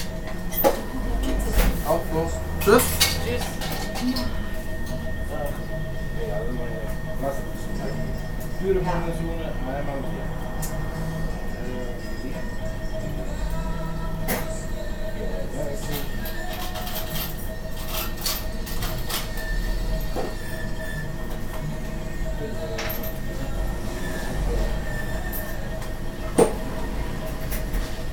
unna, morgenstraße, greek fast food
inside the popular local greek fast food station
soundmap nrw - social ambiences and topographic field recordings
Deutschland, European Union